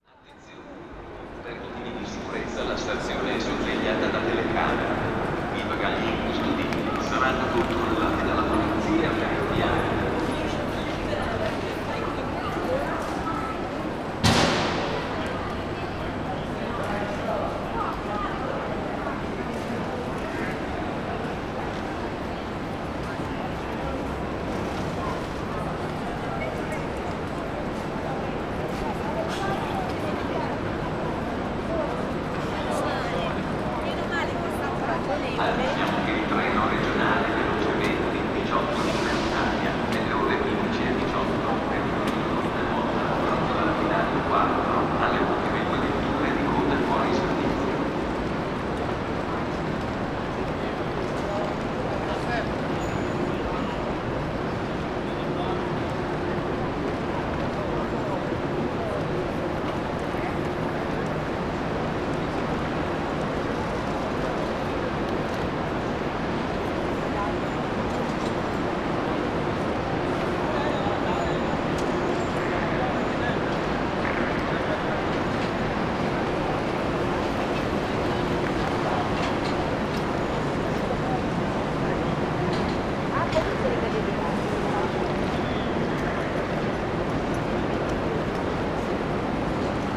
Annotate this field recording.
the volume of the Central train station is tremendous. lots of space to be filled with sounds. very interesting sonic experience.